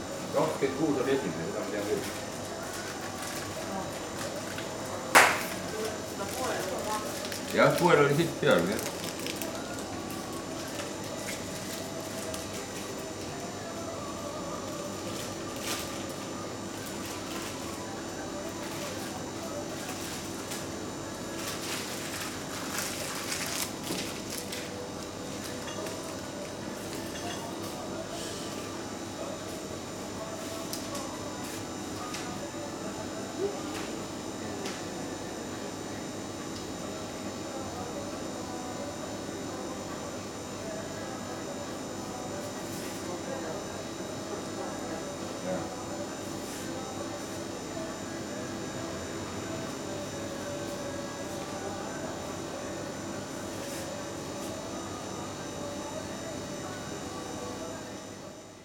Pagari Shop, Pagari Estonia
sounds captured inside the local shop. recorded during the field work excursion for the Estonian National Museum.